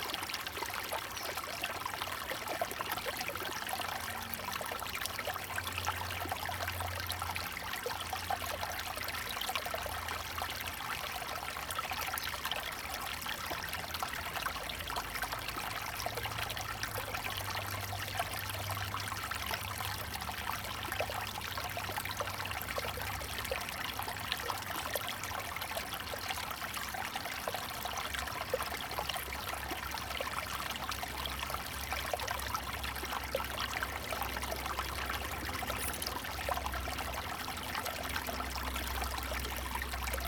Paper Dome, 埔里鎮桃米里 - Flow sound
sound of the Flow
Zoom H2n MS+XY
24 March 2016, ~07:00